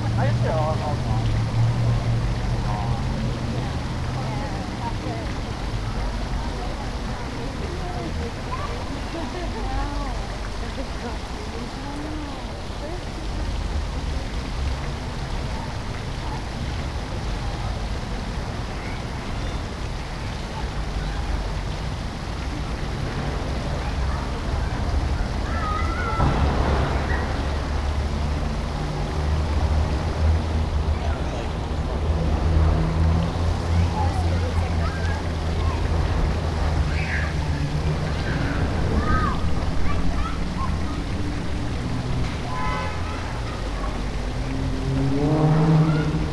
Marietta Square, S Park Square NE, Marietta, GA, USA - Center Of Marietta Square
A recording done at a small park in the middle of Marietta Square. People were out and about due to the sunshine and unseasonably warm weather, and a group of people were in the center of the park for some kind of gathering. There's a fountain at the very center of the park, and the entire area is surrounded by roads. There's also a children's play area to the right of the recorder. Multiple people walked by and inspected my recording rig, but thankfully nobody disturbed it or asked me what it was while it was on. Recorded with a Tascam dr-100mkiii and a windmuff.